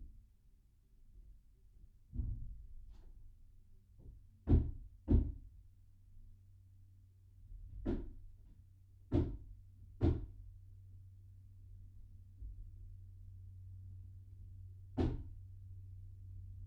{"title": "Unnamed Road, Malton, UK - Ill hold it ... you hit it ...", "date": "2018-09-18 12:00:00", "description": "I'll hold it ... you hit it ... roofers retiling a house ... lavalier mics clipped to sandwich box in stairwell ...", "latitude": "54.12", "longitude": "-0.54", "altitude": "76", "timezone": "GMT+1"}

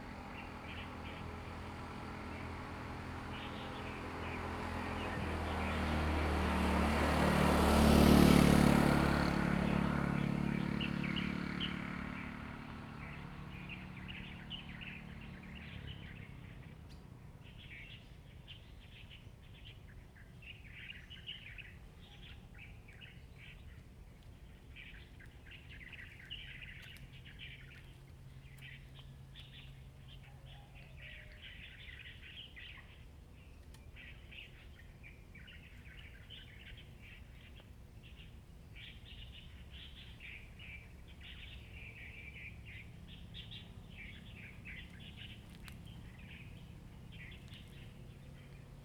{"title": "Minzu Rd., Hsiao Liouciou Island - Birds singing", "date": "2014-11-02 07:11:00", "description": "Birds singing, Traffic Sound\nZoom H2n MS +XY", "latitude": "22.35", "longitude": "120.38", "altitude": "55", "timezone": "Asia/Taipei"}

